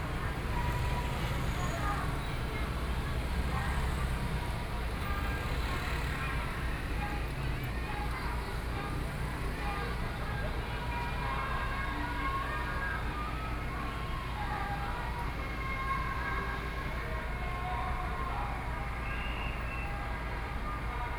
Festival, Traffic Sound, At the roadside
Sony PCM D50+ Soundman OKM II
26 July 2014, 19:51